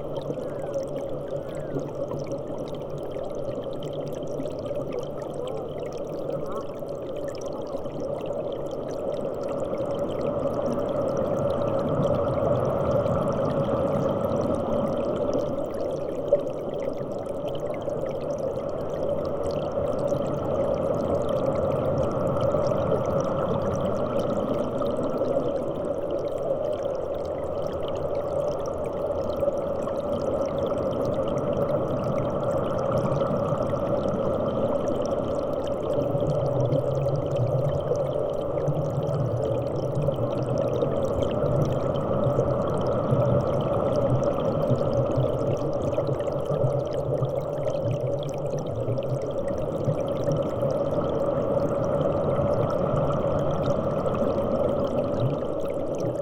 Druskininkai, Lithuania, musical fountain under
Musical fountain of Druskininkai recorded from underwater (just a few centimetres) perspective